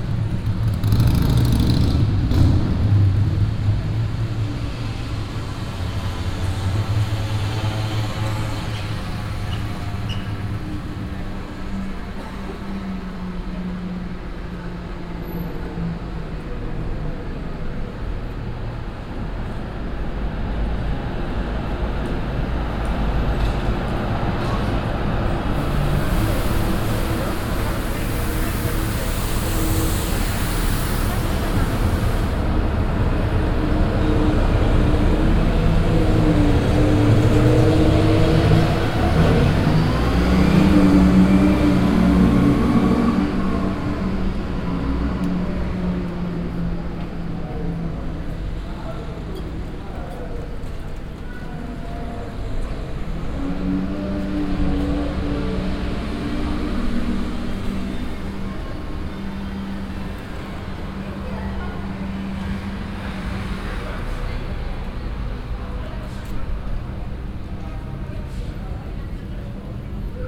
amsterdam, vijzelstraat, traffic and bells
different kind of traffic passing by. in the distance an hour bell
international city scapes - social ambiences and topographic field recordings
Amsterdam, The Netherlands, July 2010